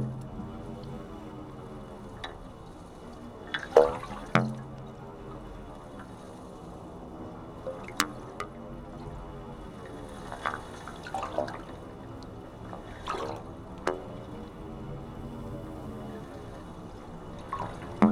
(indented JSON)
{
  "title": "metal pipes in the sea, Istanbul",
  "date": "2010-02-22 15:16:00",
  "description": "recording of two metal pipes stuck in the sea on Bugazada.",
  "latitude": "40.88",
  "longitude": "29.05",
  "timezone": "Europe/Tallinn"
}